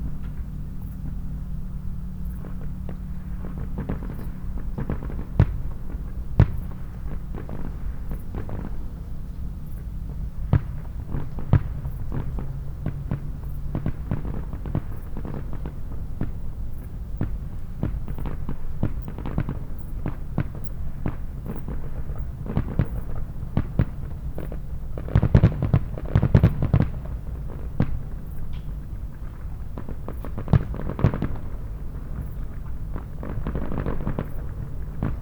Pergola, Malvern, UK - Distant Fireworks
A distant fireworks display echoes across the shallow valley towards the Severn. A bird flies through the garden.
Recorded with a MixPre 6 II and 2 Sennheiser MKH 8020s propped up on a kitchen chair under the roof of the pergola for protection.
West Midlands, England, United Kingdom, 28 August 2021